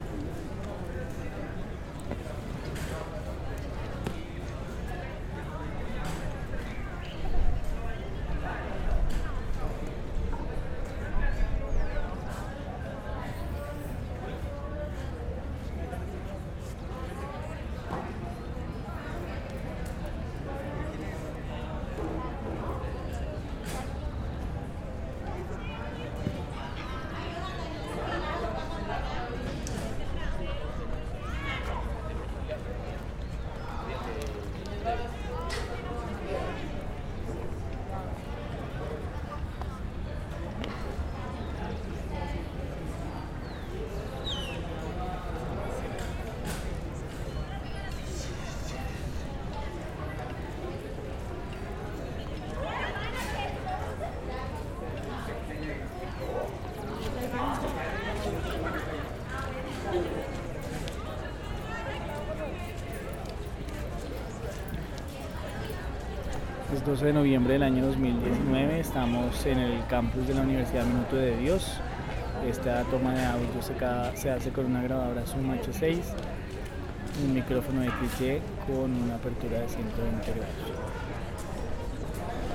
a, Dg., Bogotá, Colombia - Plazoleta interior Uniminuto
Interior de Uniminuto Sede principal - Una tarde de noviembre de 2019
Distrito Capital, Colombia, 12 November 2019